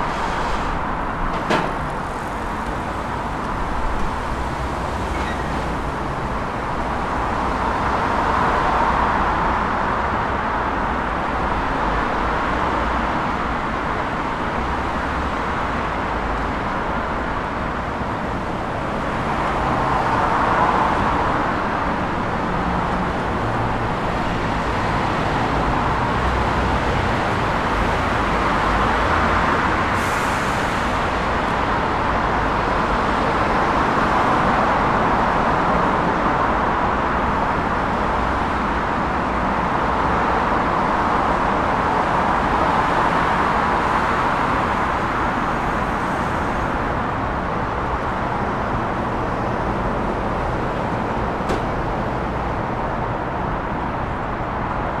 Stockholm, Sverige - Essingeleden
Heavy traffic. People biking and walking. Close to a T-intersection and under an elevated highway, part of European route E4 and E20. Zoom H5
Stockholms län, Svealand, Sverige, 19 August 2020